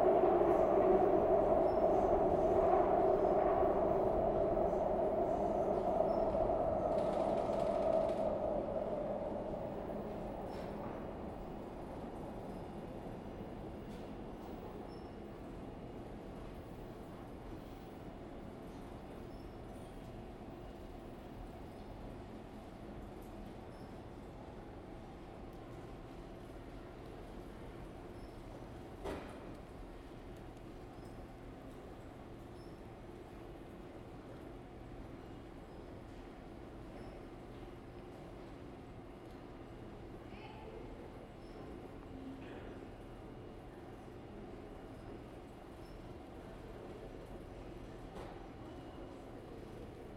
East Hollywood, Los Angeles, Kalifornien, USA - LA - underground station, early afternoon
LA - underground station vermont / santa monica, 2:30pm, distant voices, train arriving and leaving;